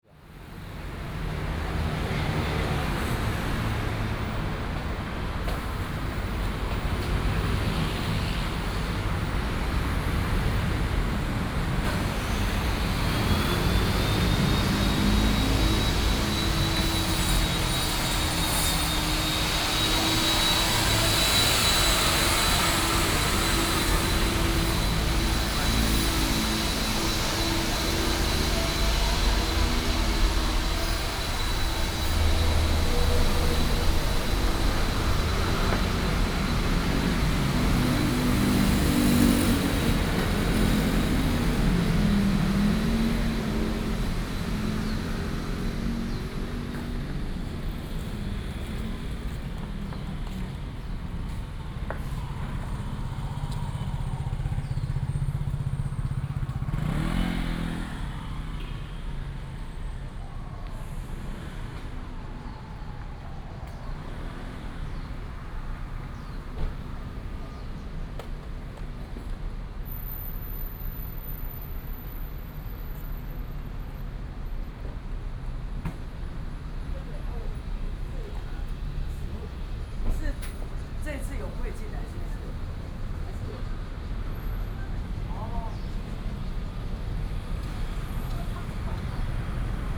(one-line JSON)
{"title": "Sec., Keelung Rd., Da’an Dist., Taipei City - Traffic Sound", "date": "2015-07-17 08:31:00", "description": "Traffic Sound, Walking in the street, Working hours, A lot of cars and locomotives", "latitude": "25.02", "longitude": "121.55", "altitude": "15", "timezone": "Asia/Taipei"}